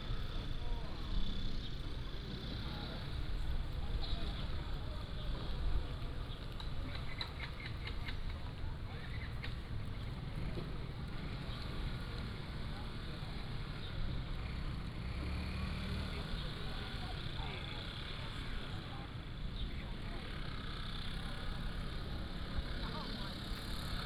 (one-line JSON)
{"title": "介壽澳口公園, Nangan Township - In the Park", "date": "2014-10-15 06:54:00", "description": "Sitting Square Park, Traffic Sound", "latitude": "26.16", "longitude": "119.95", "altitude": "80", "timezone": "Asia/Taipei"}